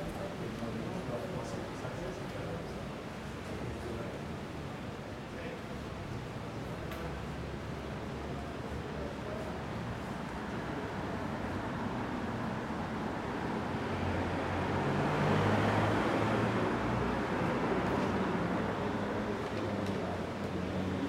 Rue de Béarn, Paris, France - AMB PARIS EVENING RUE DE BÉARN UNDER PORCH MS SCHOEPS MATRICED
This is a recording of the Rue de Béarn during evening under a porch which surrounded the famous 'Place des Vosges' located in the 3th district in Paris. I used Schoeps MS microphones (CMC5 - MK4 - MK8)